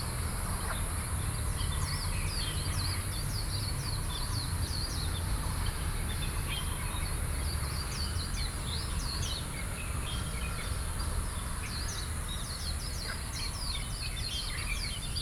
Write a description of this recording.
The park early in the morning, Sony PCM D50 + Soundman OKM II